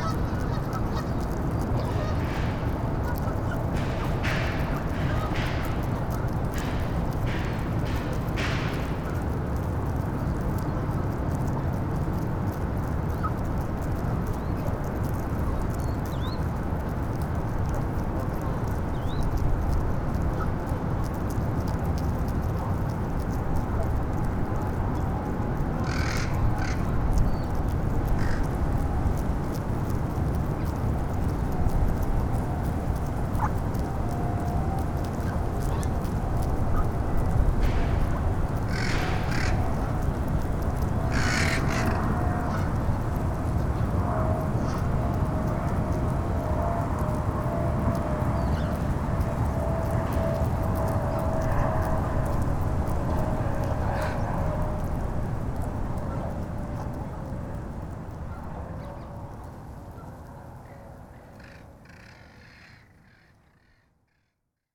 Geese at Gas Works, Wallingford, Seattle, WA, USA - Geese eating grass
About fourty or so Canada Geese pulling up young shoots of grass as they slowly make their way toward me. Boats motoring past, air traffic, a cyclist, crows and various unidentified birds.
Sony PCM D50
12 August, 14:42